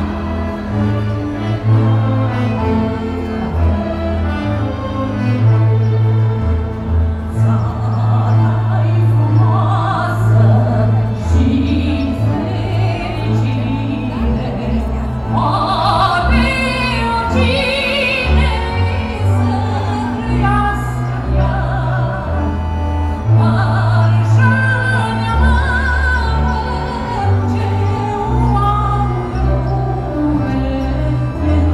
Behind the main stge of the Cluj City festivial Cilele 2014.
The sound of a traditional folklore band playing.
international city scapes - field recordings and social ambiences
Cluj-Napoca, Romania